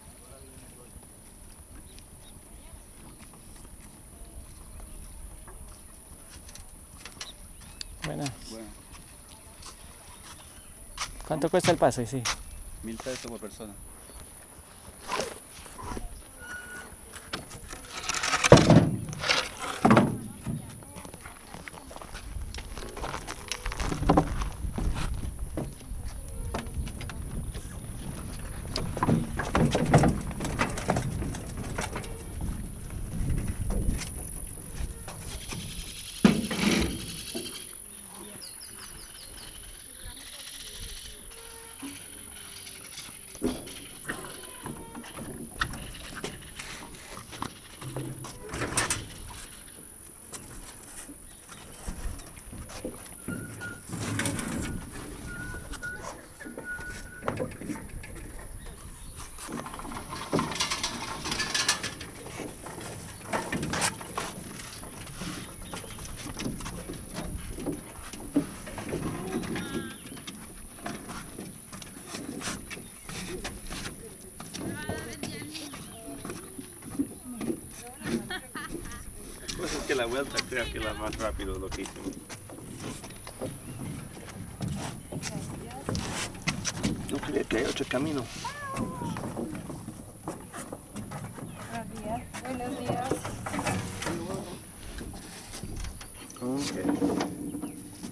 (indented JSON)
{
  "title": "Angostura-San Sebastián, Magdalena, Colombia - Paso del caño",
  "date": "2022-04-29 10:38:00",
  "description": "Una chalupa empujada a remo conecta el corregimiento de El Horno con el de Angostura. El capitán trabaja todos los días de 5AM a 7PM.",
  "latitude": "9.30",
  "longitude": "-74.40",
  "altitude": "19",
  "timezone": "America/Bogota"
}